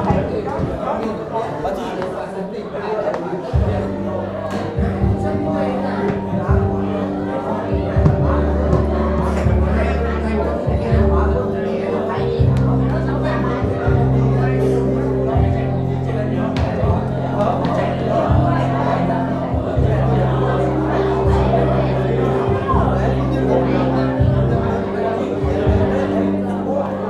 berlin, herzbergstraße: dong xuan center, halle 3, restaurant
vietnamese restaurant at dong xuan center, solo entertainer preparing his synthesizer for a vietnamese wedding party
the city, the country & me: march 6, 2011